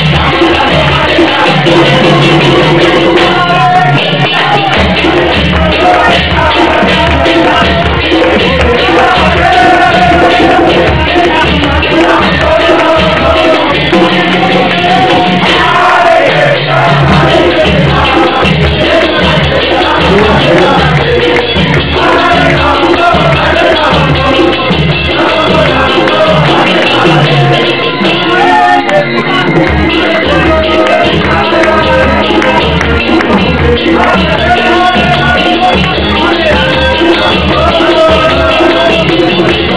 Hare Kristna Temple, 1189 Church Street Pretoria, Sunday Night
The high point of the Sunday programme at the Hare Krishna Pretoria temple in South Africa.